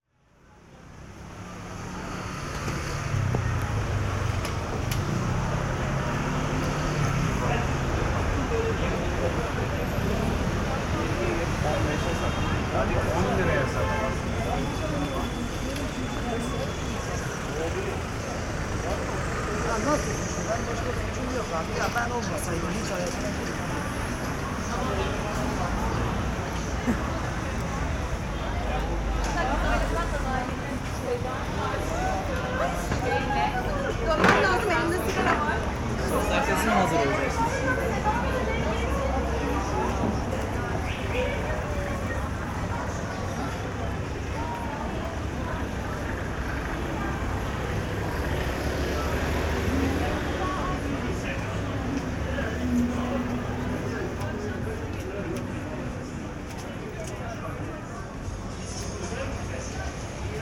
Karakolhane, Kadıköy, İstanbul, Turkey - 920b Walk on Kadıköy
Binaural recording of a walk on the "calm" part of Kadıköy.
Binaural recording made with DPA 4560 on a Tascam DR 100 MK III.
Marmara Bölgesi, Türkiye, September 2022